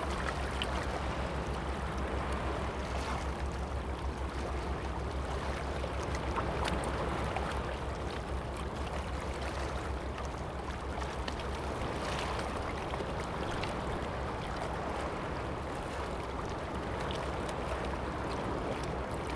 西子灣, Gushan District, Kaohsiung - waves
Sound of the waves, Beach
Zoom H2n MS+XY